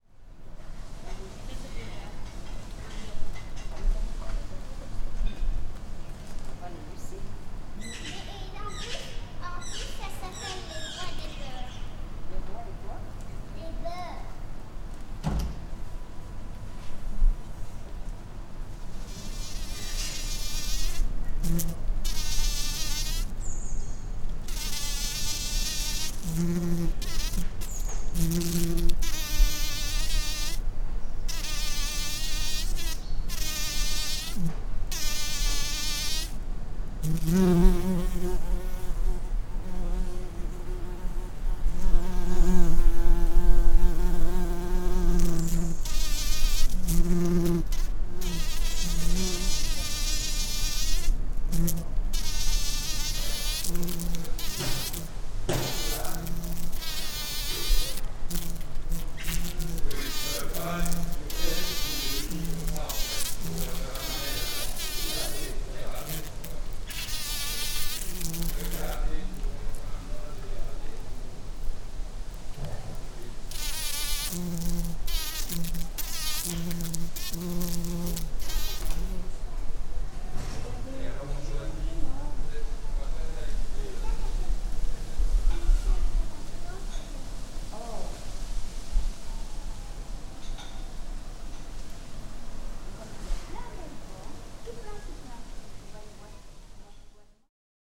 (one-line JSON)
{"date": "2011-06-04 06:40:00", "description": "Brussels, Rue Capouillet, a Bumblebee.", "latitude": "50.83", "longitude": "4.35", "altitude": "66", "timezone": "Europe/Brussels"}